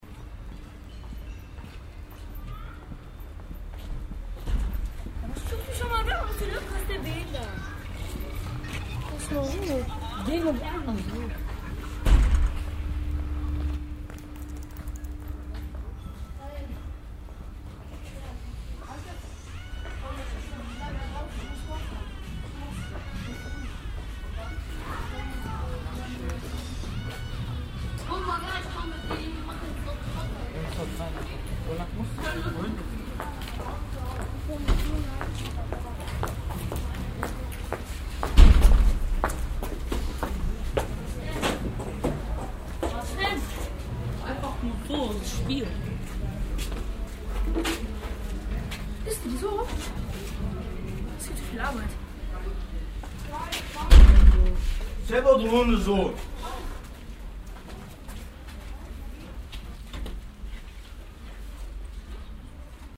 monheim, haus der jugend, jugendliche
mobiltelephon signale, zurufe, schritte
nachmittags
project: :resonanzen - neanderland - social ambiences/ listen to the people - in & outdoor nearfield recordings
18 April 2007